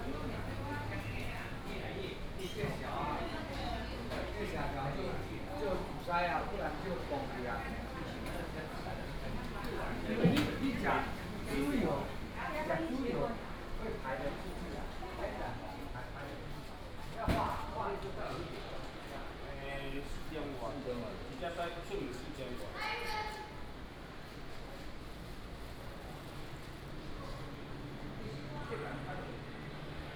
三德民有零售市場, Bade Dist., Taoyuan City - Walking in a small alley
Walking in a small alley, traffic sound, Traditional market, sound of birds
Bade District, Taoyuan City, Taiwan, August 2017